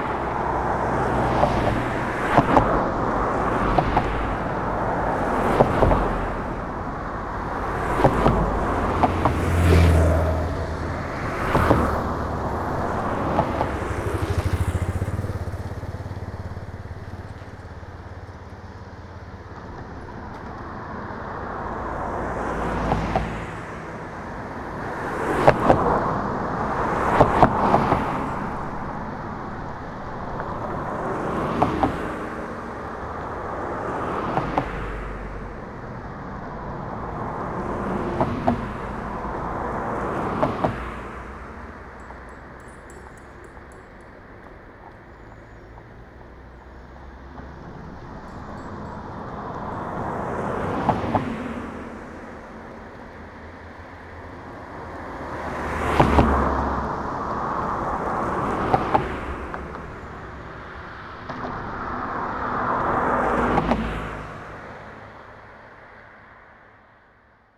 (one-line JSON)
{"title": "berlin, teltower damm: knesebeckbrücke - borderline: knesebeck bridge", "date": "2011-10-01 17:35:00", "description": "cars passing over a bump\nthe bridge was closed 1961-1990; reopened to traffic in june 1990 after the fall of the berlin wall\nborderline: october 1, 2011", "latitude": "52.40", "longitude": "13.27", "altitude": "34", "timezone": "Europe/Berlin"}